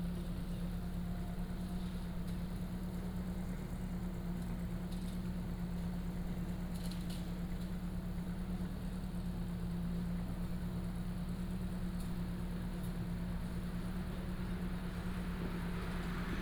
Zhongxing Rd., Fangliao Township - Late night street
Night outside the convenience store, Late night street, Traffic sound, Truck unloading, Truck unloading
Pingtung County, Taiwan, 28 March 2018